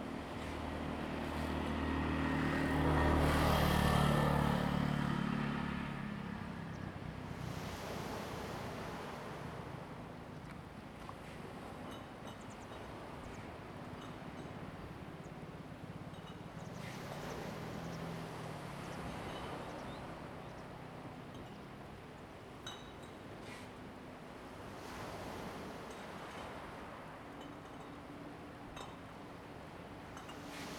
sound of the waves, On the road, An old man is finishing the bottle, Traffic Sound
Zoom H2n MS +XY
Lanyu Township, Taitung County, Taiwan